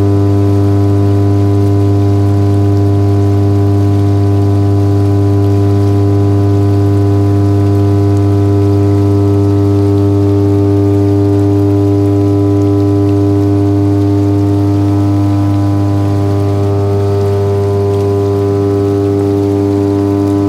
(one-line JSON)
{"title": "stolzembourg, SEO, hydroelectric powerplant, transformator", "date": "2011-09-18 14:44:00", "description": "Inside an upper tunnel of the SEO hydroelectric powerplant. The sound of a power transformator.\nStolzemburg, SEO, Wasserkraftwerk, Transformator\nIn einem höher gelegenen Tunnel des SEO-Wasserkraftwerks.\nStolzembourg, SEO, usine hydroélectrique, transformateur\nÀ l’intérieur du tunnel supérieur de l’usine hydroélectrique SEO. Le bruit d’un transformateur électrique.", "latitude": "49.95", "longitude": "6.18", "altitude": "298", "timezone": "Europe/Luxembourg"}